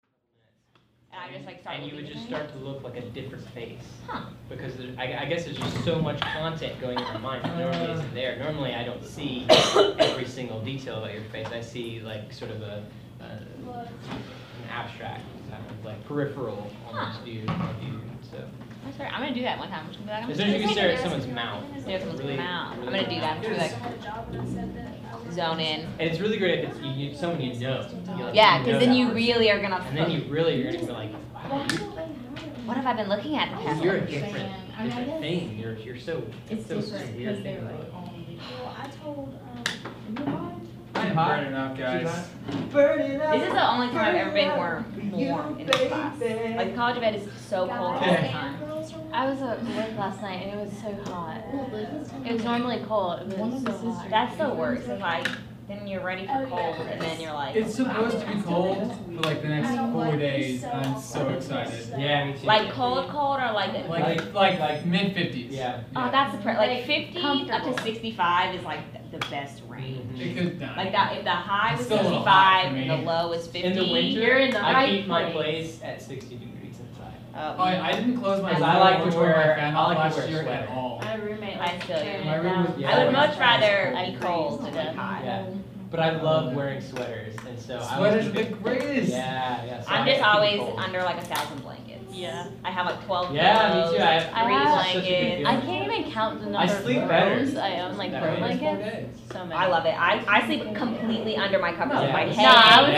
Appalachian State University, Boone, NC, USA - audio documentary class people gathering chatting
This is students chatting in the ASU CI4860 Audio Documentary class before class begins.
24 September 2015, 15:15